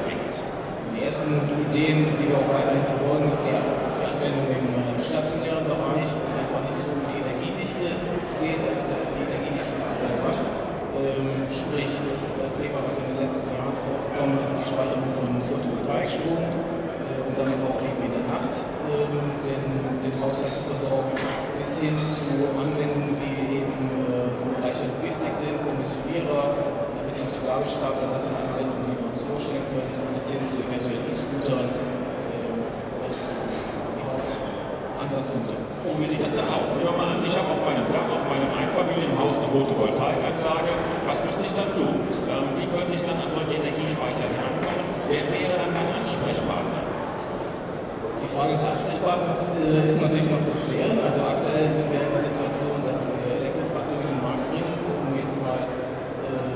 Colosseum-Theater, Essen, Deutschland - Tagungsgeräusche / meeting noises
Die große Vorhalle ist gefüllt mit Ausstellern. Ein Moderator spricht über Batterien von Elektroautos. / The large lobby is filled with exhibitors. A presenter talks about batteries for electric cars.